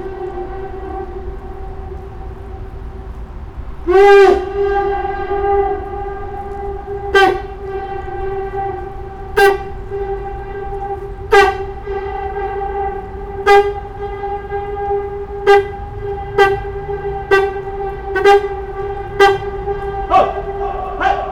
Pont Schuman, Lyon, France - Échos du pont Schuman
Des échos enregistrés sous le pont Schuman, quai de Saône, Lyon 4e